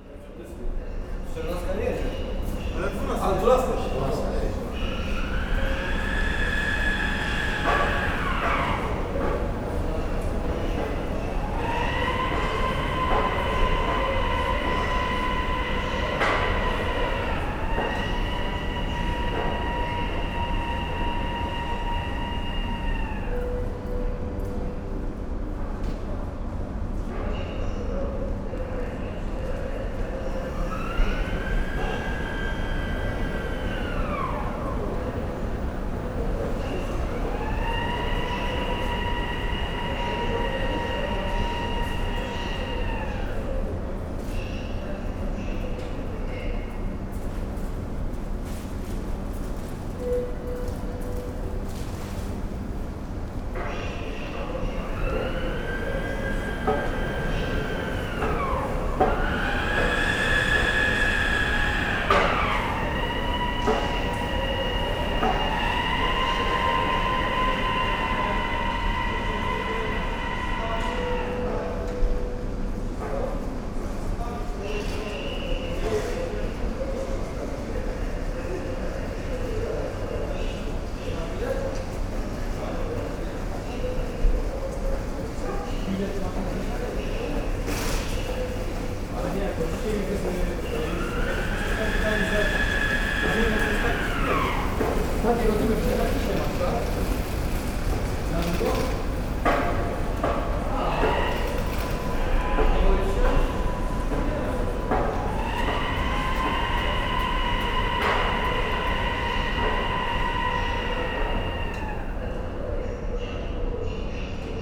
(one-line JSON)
{"title": "Western train station, Zachodnia, Poznan - sliding doors", "date": "2020-03-18 06:33:00", "description": "underpass at the western train station in Poznan. the stairs to the station are separated by heavy, glass sliding doors which make lamenting sound when opening and closing. the knocking sound towards the end is an escalator. it's early in the morning, a few people passing by. train announcements diffused in the long corridor. (roland r-07)", "latitude": "52.40", "longitude": "16.91", "altitude": "79", "timezone": "Europe/Warsaw"}